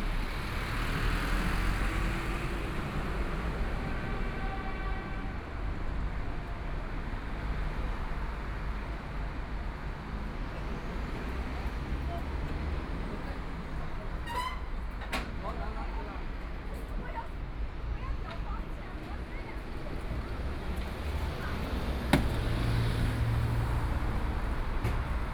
Walking beside the road, The sound of the crowd on the street, Traffic Sound, Unloading sound, Binaural recording, Zoom H6+ Soundman OKM II
Huangpu, Shanghai, China, 3 December 2013, ~18:00